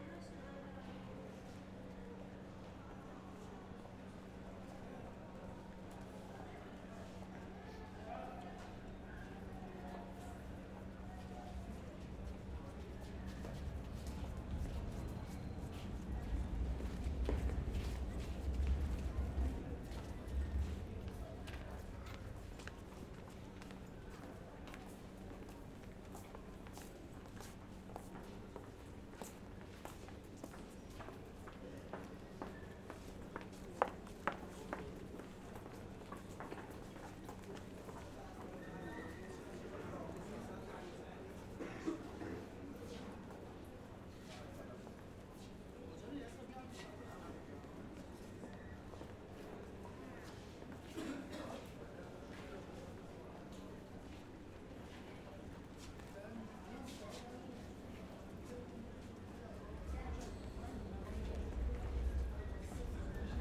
I used a Zoom H6 holding in my hand and entered metro station and ...